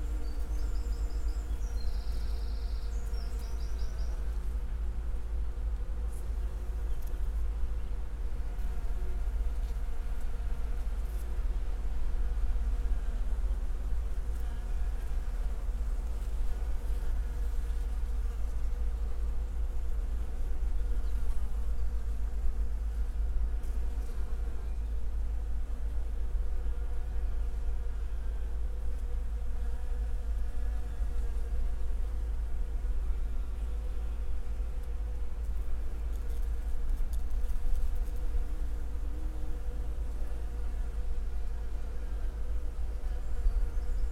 Field off Barric Lane, Eye, Suffolk, UK - crab apple tree in blossom with bees
a crab apple tree an isolated remnant of what once must have been a rich, diverse hedgerow, pruned hard into an odd L-shape against the chain link fence of the Research Station. This warm sunny day in April it is densely covered in thick pale pink blossom and swarming with bees of every shape and size; a stark and curious contrast with the silent monocrop that it sits adjacent to. Wren and Chiffchaff. Rusty, abandoned sugarbeet harvester shaken by the wind. The all pervasive background hum of the Research Station.
21 April 2022, 14:45